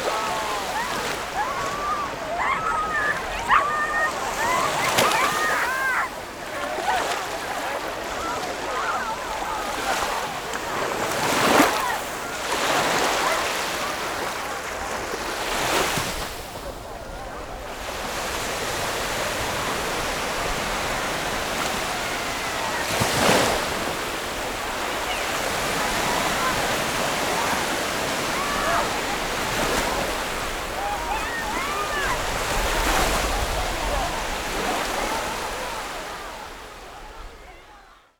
Shimen, New Taipei City - Summer beach
桃園縣 (Taoyuan County), 中華民國, 25 June 2012, ~13:00